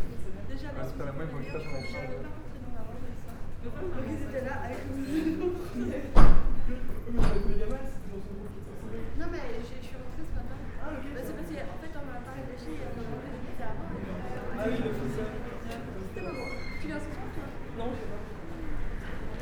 Centre, Ottignies-Louvain-la-Neuve, Belgique - Languages institute
In front of the languages institute (institut des langues vivante), end of a course. Students are discussing.
Ottignies-Louvain-la-Neuve, Belgium, 11 March